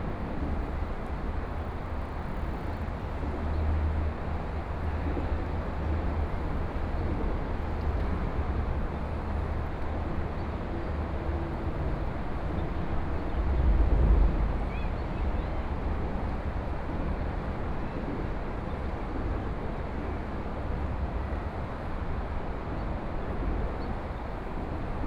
On the highway below, .Sunny afternoon
Please turn up the volume a little
Binaural recordings, Sony PCM D100 + Soundman OKM II
Taipei City, 中山高速公路, 28 February